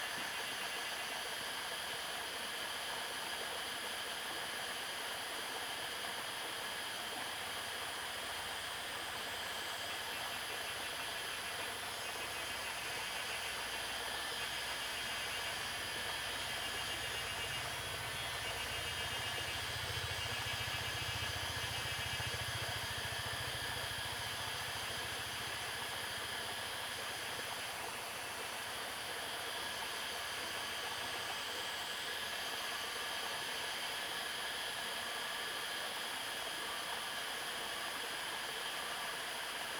種瓜坑溪, 成功里, Nantou County - River and Cicada sounds
River sound, Cicada sounds, Faced woods
Zoom H2n MS+XY